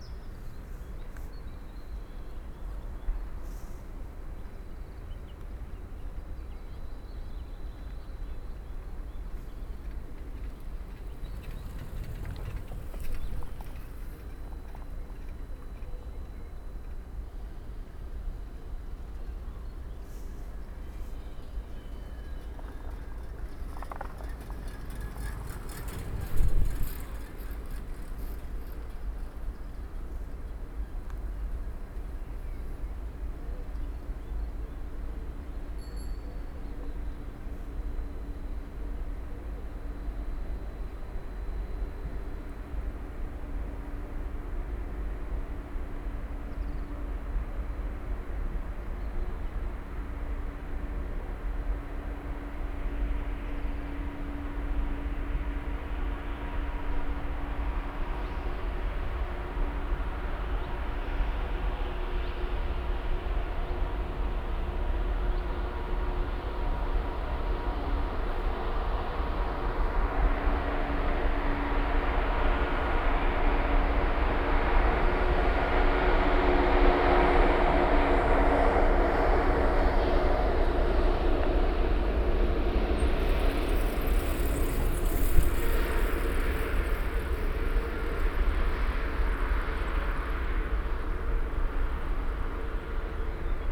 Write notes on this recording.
walking the food path along the station rails and onto the bridge across street, river and canal; just two trains pulling out of the station while I pass... Easter Sunday under pandemic